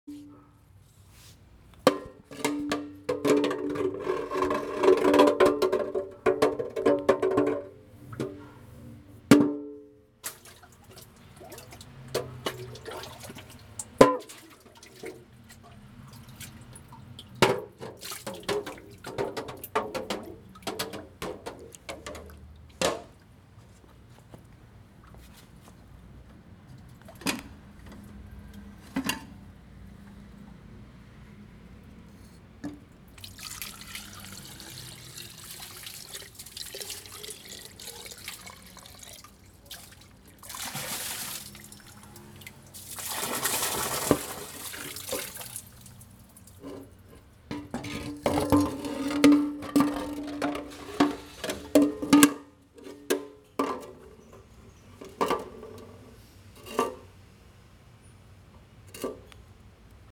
Hae-An Temple海安宮 - Getting the water from the well
Getting the water from the well 打井過程聲
West Central District, Tainan City, Taiwan, March 2014